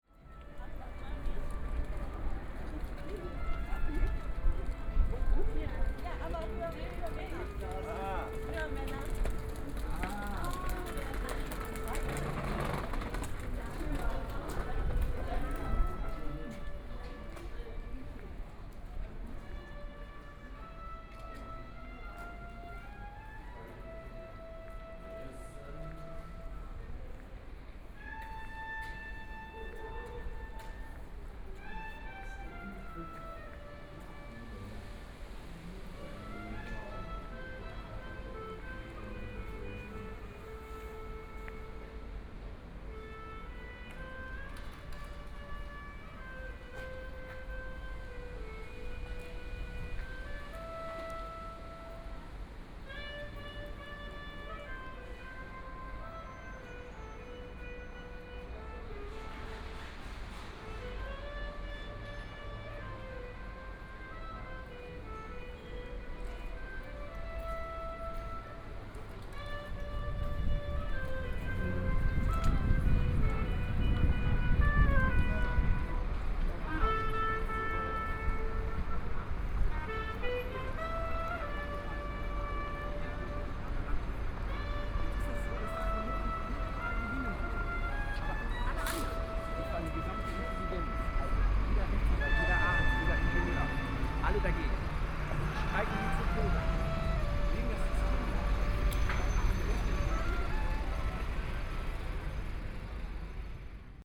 Odeonsplatz, Munich, Germany - soundwalk
Street music, Pedestrians and tourists
11 May 2014